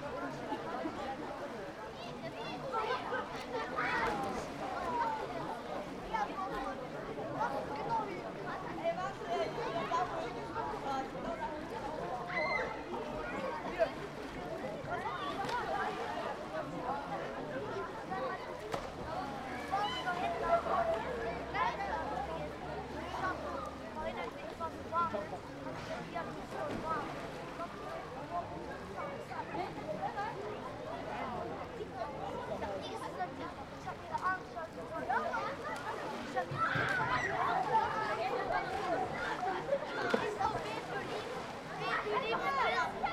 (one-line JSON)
{"title": "Open Air Bath, Neviges - open Air Bath, Neviges", "date": "2009-07-27 18:20:00", "description": "Saturday evening at the open air bath, Neviges", "latitude": "51.30", "longitude": "7.09", "altitude": "180", "timezone": "Europe/Berlin"}